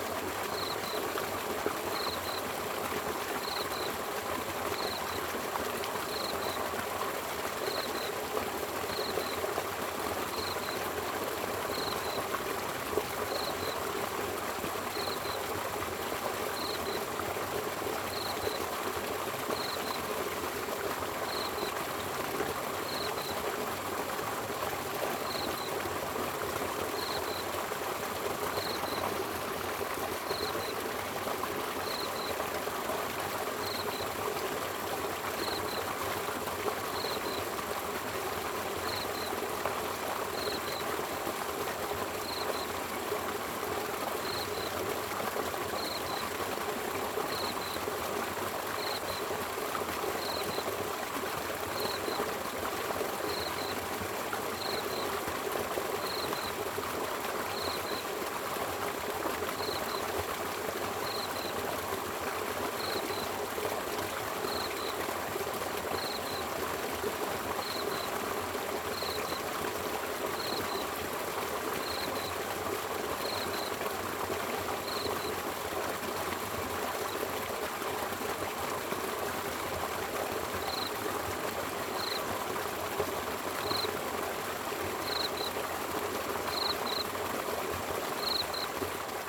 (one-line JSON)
{"title": "桃米巷桃米里, Puli Township - insects and Flow sound", "date": "2016-07-14 01:58:00", "description": "Aqueduct, Sound of insects, Flow sound\nZoom H2n Saprial audio", "latitude": "23.94", "longitude": "120.93", "altitude": "475", "timezone": "Asia/Taipei"}